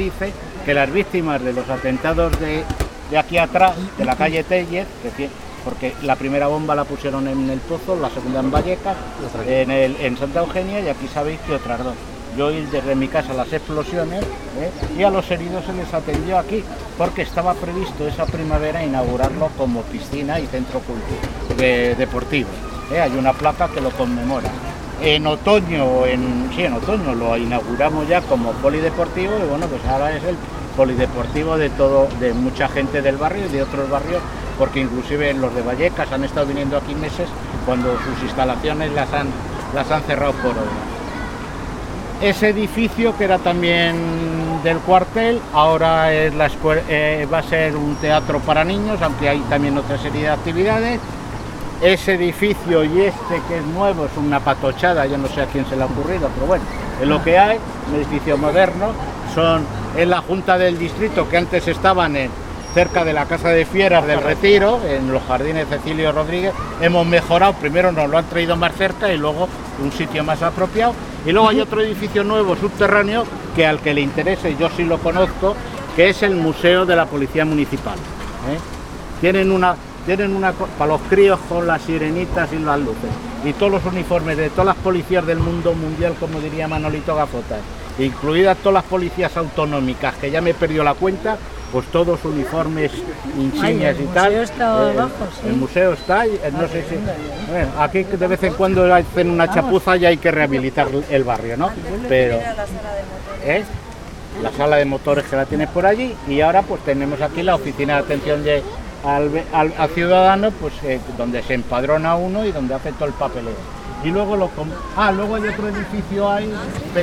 Pacífico, Madrid, Madrid, Spain - Pacífico Puente Abierto - Transecto - 09 - CC Daoiz y Velarde (antiguos Cuarteles de Artillería)
Pacífico Puente Abierto - CC Daoiz y Velarde (antiguos Cuarteles de Artillería)